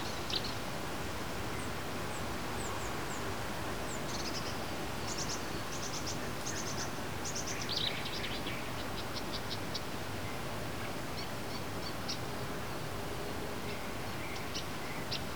Poznan, balcony - night bird conversation
two birds engrossed in conversation late at night. the pattern very intricate, almost without repetitions. lots of hiss due to high amp gain unfortunately choking the space that was present.
Poznan, Poland, 25 May 2014